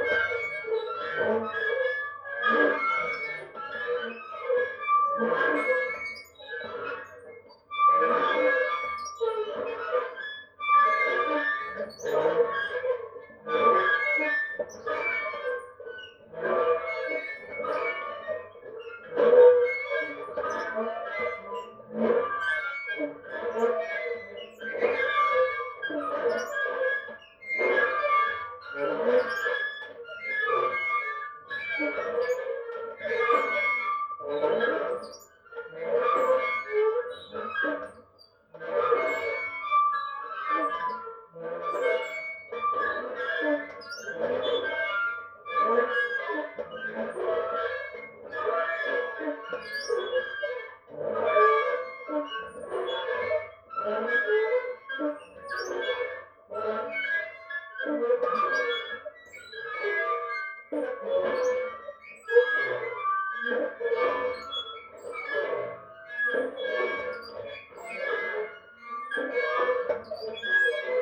Plaza Simon Bolivar, Valparaíso, Chile - playground swings, contact mic
swings recorded with a stereo contact microphone attached to the metal structure
Región de Valparaíso, Chile, 30 November, ~18:00